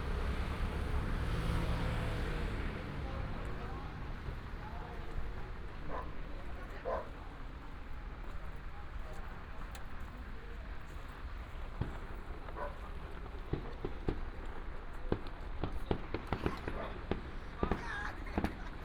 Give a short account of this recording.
Near the fishing port, Fireworks and firecrackers, Traffic sound